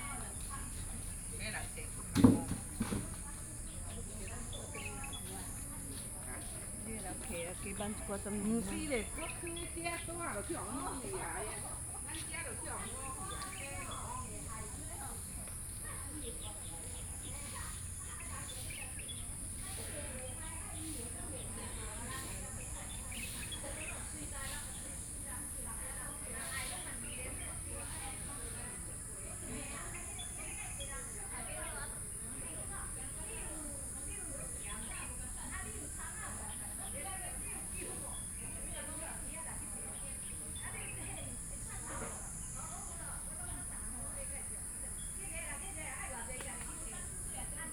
民意里, Hualien City - in the Park
Birdsong, Morning at Park, Many older people are sports and chat
Binaural recordings
Hualien County, Taiwan, 29 August 2014, 06:21